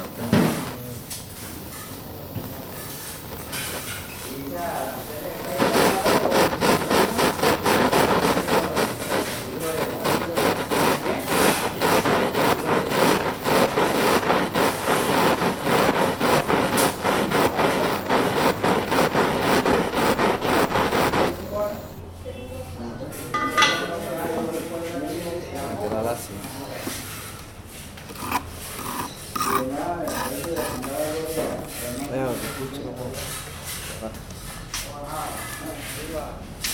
{
  "title": "Joyería Ginna, Mompós, Bolívar, Colombia - Joyero",
  "date": "2022-04-30 16:28:00",
  "description": "Un joyero del taller de la joyería Ginna trabaja los últimos retoque de una pulsera de plata.",
  "latitude": "9.24",
  "longitude": "-74.42",
  "altitude": "19",
  "timezone": "America/Bogota"
}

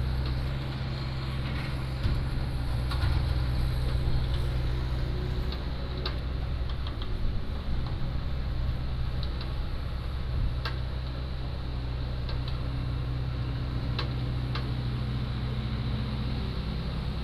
{
  "title": "後沃海濱公園, Beigan Township - Bulldozer",
  "date": "2014-10-13 11:18:00",
  "description": "Bulldozer, cleaned sand, Small village, Sound of the waves",
  "latitude": "26.22",
  "longitude": "120.01",
  "altitude": "7",
  "timezone": "Asia/Taipei"
}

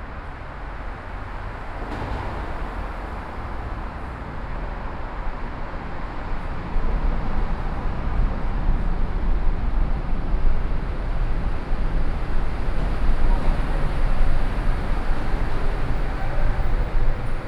{
  "title": "Washington DC, K ST NW - Tunnel",
  "date": "2011-11-15 16:42:00",
  "description": "USA, Virginia, Washington DC, Cars, Truck, Tunnel, Road traffic, Binaural",
  "latitude": "38.90",
  "longitude": "-77.00",
  "altitude": "15",
  "timezone": "America/New_York"
}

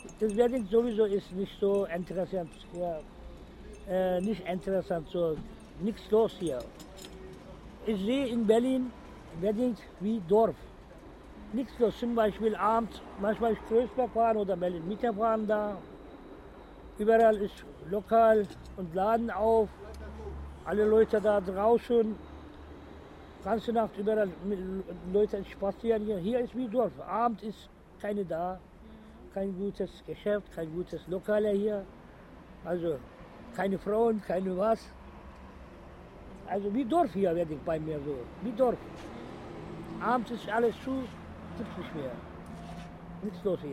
{
  "title": "Wedding is a village, Koloniestrasse Berlin",
  "date": "2011-04-26 11:04:00",
  "description": "an old man talking about wedding as a village",
  "latitude": "52.55",
  "longitude": "13.38",
  "altitude": "44",
  "timezone": "Europe/Berlin"
}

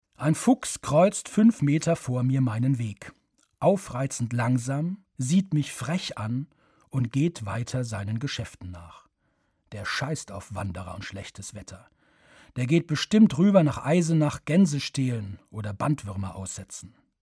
{
  "title": "oestlich von heringen - im wald",
  "date": "2009-08-16 22:34:00",
  "description": "Produktion: Deutschlandradio Kultur/Norddeutscher Rundfunk 2009",
  "latitude": "50.87",
  "longitude": "10.04",
  "altitude": "433",
  "timezone": "Europe/Berlin"
}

